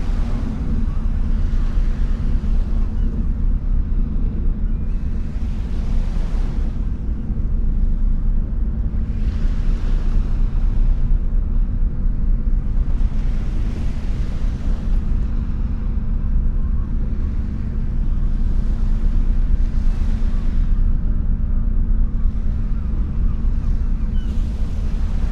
Helipad, Port de Dunkerque
Helipad, Dunkirk harbour, P&O European Seaway leaving the Charles de Gaulle lock to the left, surf, seagulls and the crane at the floating dock - MOTU traveler Mk3, Rode NT-2A.